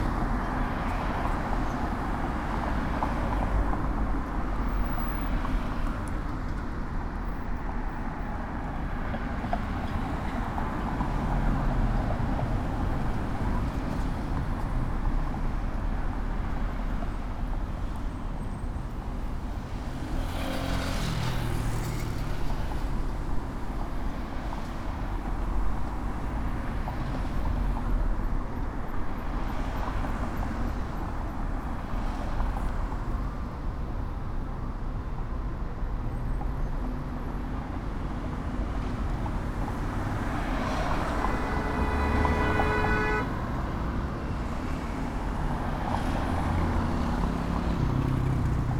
{"date": "2022-06-09 17:48:00", "description": "Traffic on Avenida Las Torres after two years of recording during COVID-19 in phase 2 in León, Guanajuato. Mexico. Outside the Suzuki car dealership.\nI made this recording on june 9th, 2022, at 5:48 p.m.\nI used a Tascam DR-05X with its built-in microphones and a Tascam WS-11 windshield.\nOriginal Recording:\nType: Stereo\nEsta grabación la hice el 9 de abril 2022 a las 17:48 horas.", "latitude": "21.16", "longitude": "-101.69", "altitude": "1821", "timezone": "America/Mexico_City"}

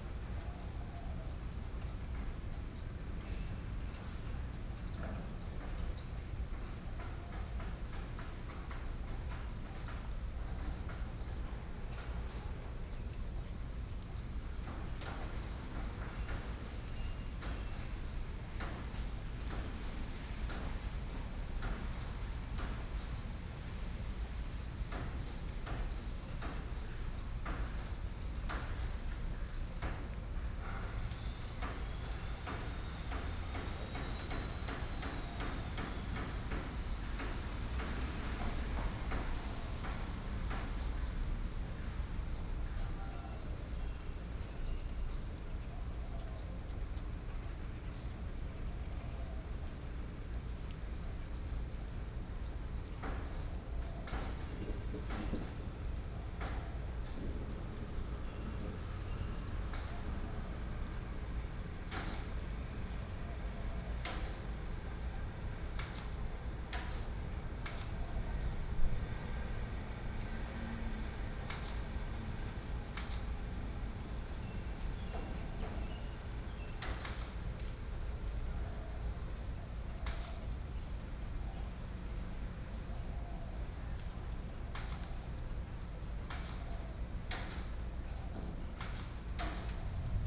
{"title": "民榮公園, Da’an Dist., Taipei City - in the Park", "date": "2015-07-24 15:51:00", "description": "Bird calls, Thunder, Traffic Sound", "latitude": "25.04", "longitude": "121.54", "altitude": "15", "timezone": "Asia/Taipei"}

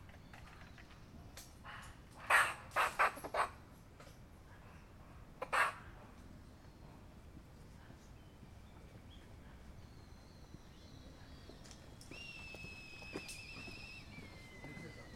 {"title": "Enoshima black-eared kites & squirrels", "date": "2011-11-18 17:28:00", "description": "Black-eared kites and Japanese squirrels on Enoshima island at sunset. Recorder LS-10", "latitude": "35.30", "longitude": "139.48", "altitude": "55", "timezone": "Asia/Tokyo"}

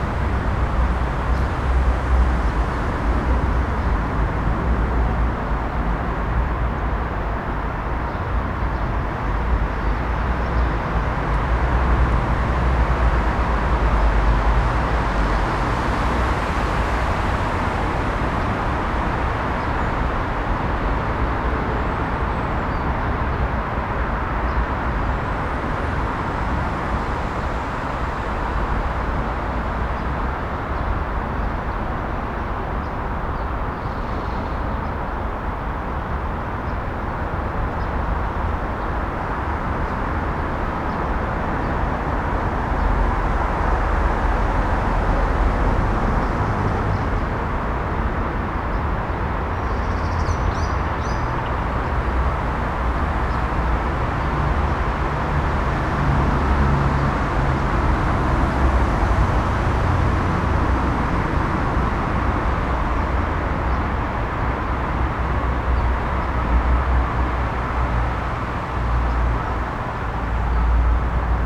{"title": "berlin, bundesplatz: park - the city, the country & me: small park", "date": "2013-04-10 11:56:00", "description": "small park on a traffic island\nthe city, the country & me: april 10, 2013", "latitude": "52.48", "longitude": "13.33", "altitude": "47", "timezone": "Europe/Berlin"}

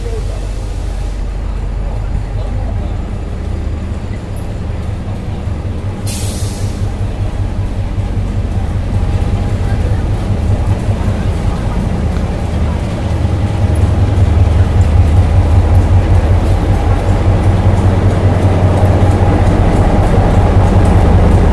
{"title": "Britomart Train Station, Auckland, New Zealand", "description": "A recording inside Britomart Train Station", "latitude": "-36.84", "longitude": "174.77", "timezone": "Pacific/Auckland"}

